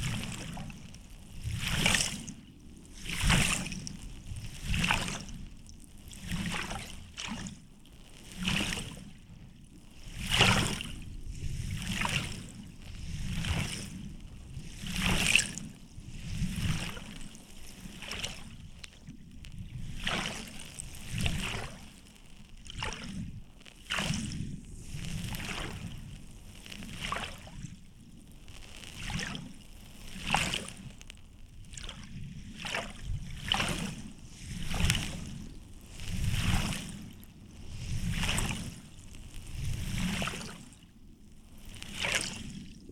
Rubikiai lake, Lithuania, shore sand
windy day. stereo hydrophone and geophone in the sand of lakeshore
Utenos apskritis, Lietuva, 14 August 2021, ~15:00